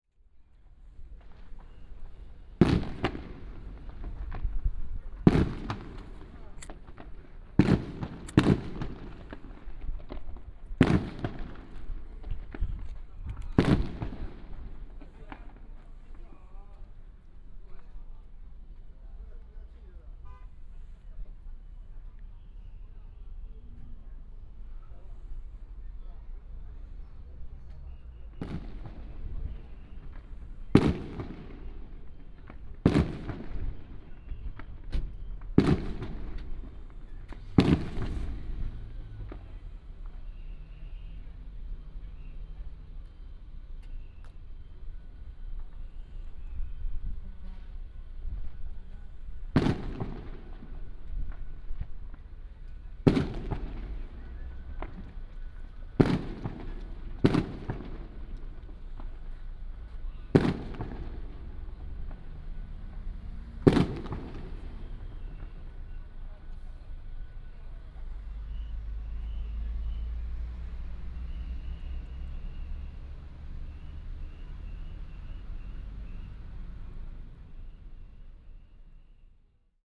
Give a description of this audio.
Matsu Pilgrimage Procession, Firecrackers and fireworks